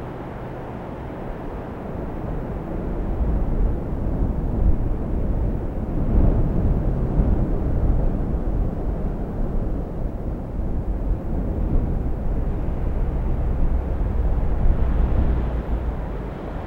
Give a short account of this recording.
in the afternoon near the artificial lake waldsee - planes in the sky, soundmap d - social ambiences and topographic field recordings